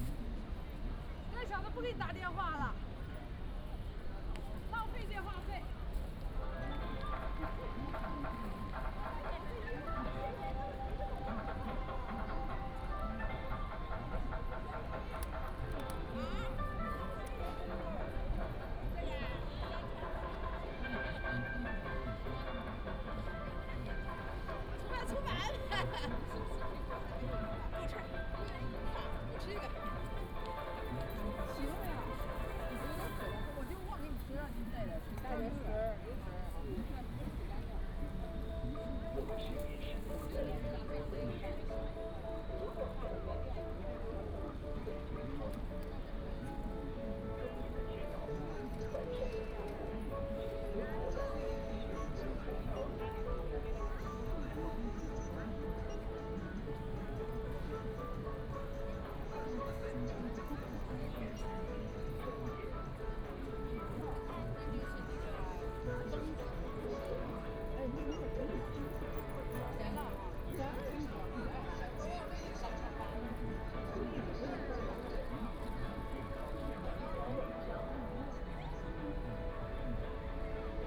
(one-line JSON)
{"title": "Putuo District, Shanghai - Square in front of the station", "date": "2013-11-23 13:50:00", "description": "The crowd, Waiting for a friend to greet the arrival of sound and conversation, TV station outside wall advertising voice, Zoom H6+ Soundman OKM II", "latitude": "31.25", "longitude": "121.45", "altitude": "5", "timezone": "Asia/Shanghai"}